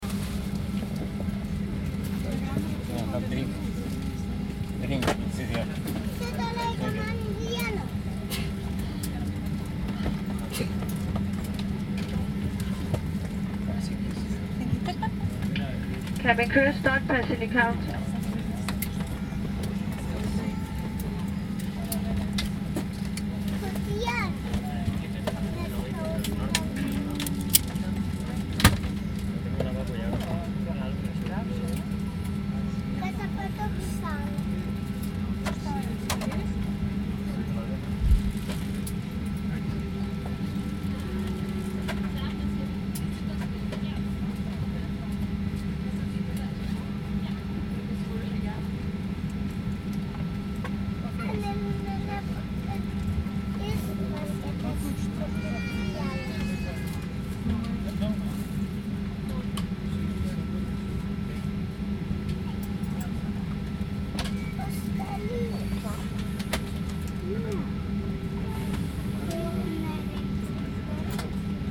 Vilnius Airport, Rodūnios kl., Vilnius, Lithuania - Boarding a plane
Noise from the cabin of the aircraft.
Recorded with Zoom H2n, 2CH, deadcat, handheld.
9 August